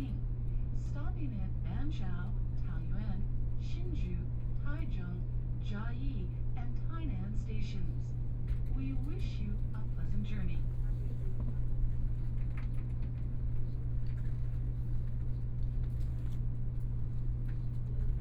Taipei Main Station, Taiwan - from the platform
Taiwan High Speed Rail, Walking into the car from the platform, Messages broadcast station, Zoom H4n+ Soundman OKM II
Zhongzheng District, Taipei City, Taiwan, 30 January 2014